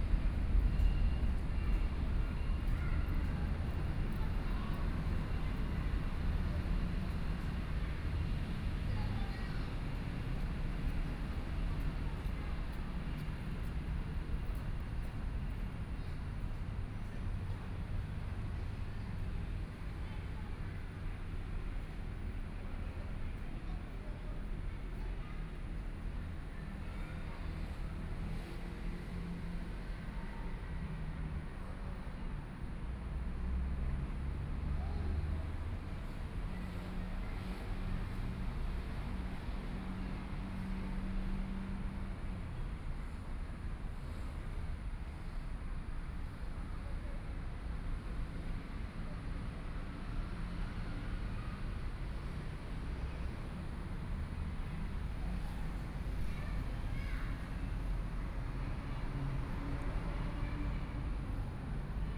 Beitou, Taipei - MRT train
MRT trains through, Sony PCM D50 + Soundman OKM II